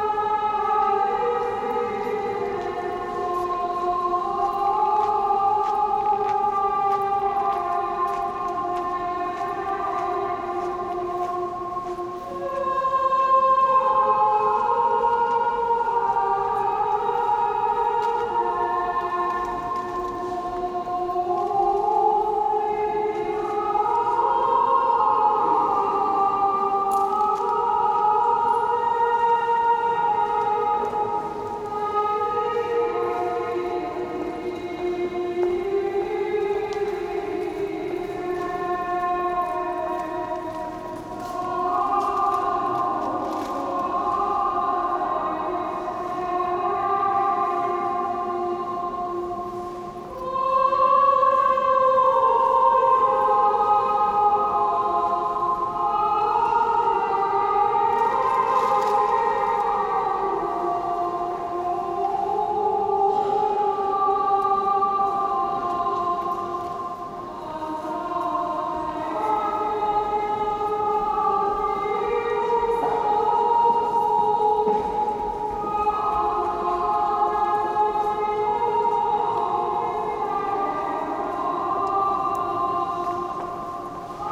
Moscow Immaculate Conception Catholic Cathedral Novus Ordo part2
Moscow, Russia